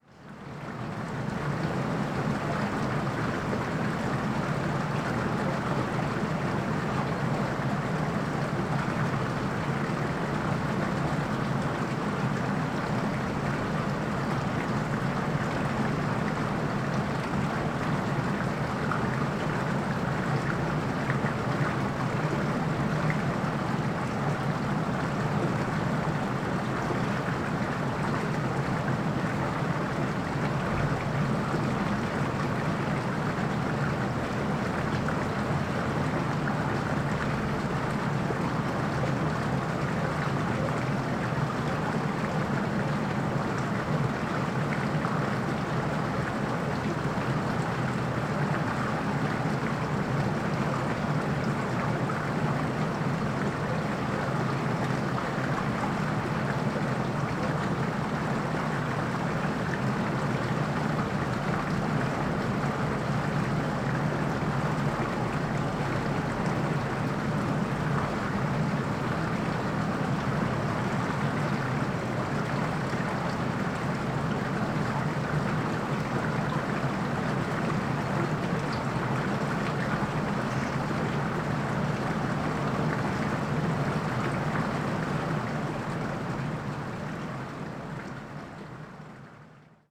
storkow: mühlenfließ - the city, the country & me: channel of a formerly watermill
small channel which supplied a watermill until 1878
the city, the country & me: february 26, 2011